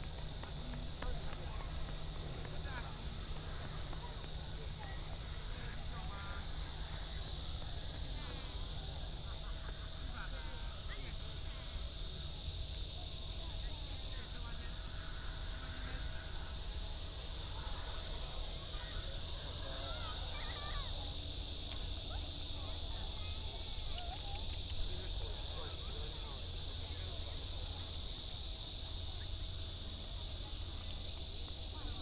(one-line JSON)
{"title": "Daniuchou, Dayuan Dist. - Near the airport runway", "date": "2017-07-23 18:06:00", "description": "Cicadas and Birds sound, Near the airport runway, take off, Many people are watching the plane", "latitude": "25.07", "longitude": "121.24", "altitude": "35", "timezone": "Asia/Taipei"}